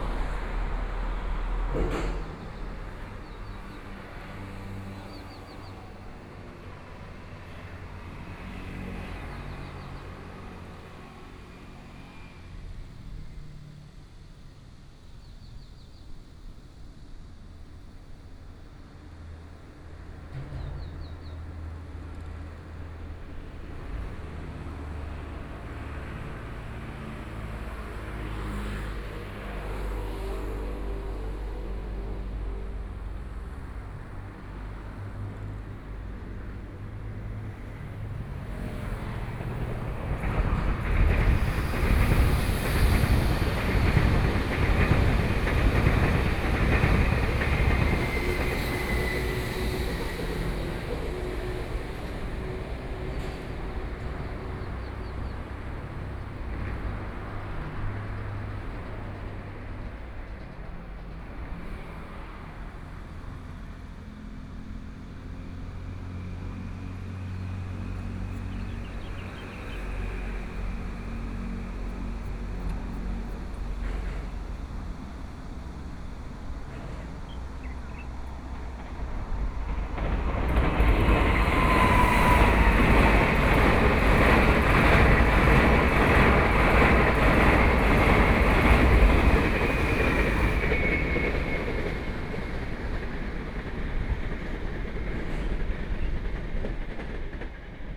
Birdsong sound, Cicadas sound, Traffic Sound, Trains traveling through